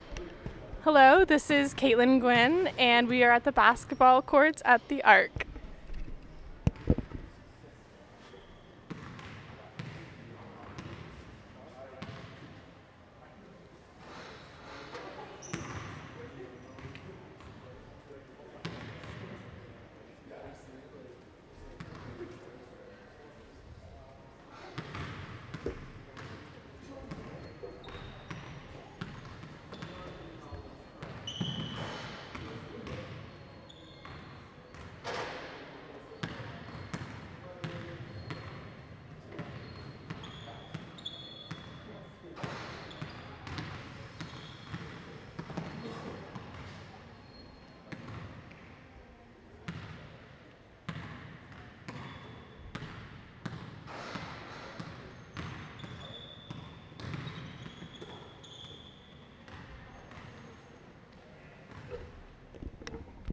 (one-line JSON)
{"title": "ARC basketball court, Queens University - ARC Basketball Court", "date": "2018-03-20 14:55:00", "description": "Please refer to the audio file for names of the location and the recordist. This soundscape recording is part of a project by members of Geography 101 at Queen’s University.", "latitude": "44.23", "longitude": "-76.49", "altitude": "92", "timezone": "America/Toronto"}